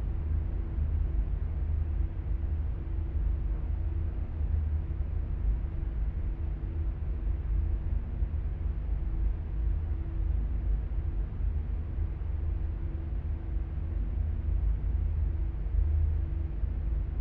Golzheim, Düsseldorf, Deutschland - Düsseldorf. Robert Schumann Hochschule, Krypta
Inside a basement chamber of the music school building which has been turned into a Krypta by the artist work of Emil Schult in five years work from 1995 to 2000.
The sounds of the room heating and ventilation and music coming from the rehearsal chambers of the floor above.
This recording is part of the exhibition project - sonic states
soundmap nrw - sonic states, topographic field recordings and art places